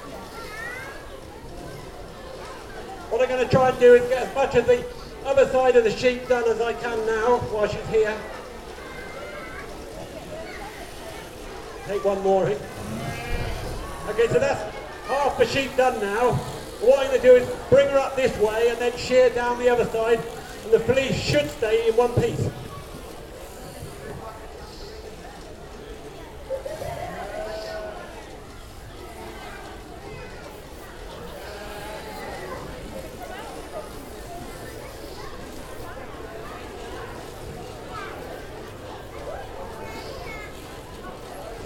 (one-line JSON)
{"title": "The Lambing Shed, Amners Farm, Burghfield, UK - Sheep shearing demonstration", "date": "2017-05-06 11:06:00", "description": "This the sound of Chris Webber demonstrating how a sheep is sheared. The sheep in question is a North Country Mule crossed with a Suffolk ram. The flock on this farm is full of wonderful sturdy little meat sheep, with incredible mothers, who produce many lambs and a lovely thick, strong woolly fleece. Chris said the Wool Marketing Board currently pay £5 per fleece which is very encouraging to hear. The demonstration was so interesting and inspiring - to me the skill involved in shearing a sheep is no ordinary task and I never tire of watching the process. Keeping the sheep docile and turning it around, all the while working over it with the buzzing clippers (that you can hear in this recording) and somehow managing to not cut the skin, look to me like a real feat. This is how all the wool in our jumpers is obtained - through this action - and it's beautiful to see it being well done.", "latitude": "51.42", "longitude": "-1.03", "altitude": "41", "timezone": "Europe/London"}